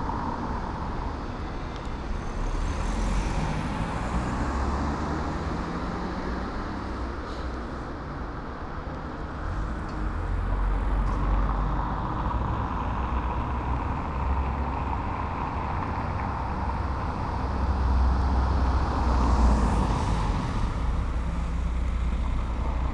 Rechts der Wertach, Augsburg, Germany - Traffic in Augsburg
some traffic noises